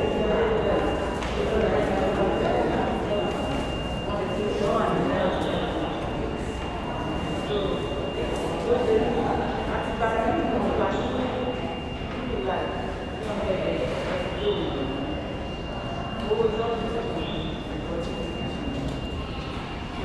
Ambience at Delancey Street/Essex Street station train, passengers are waiting for the F train to arrive.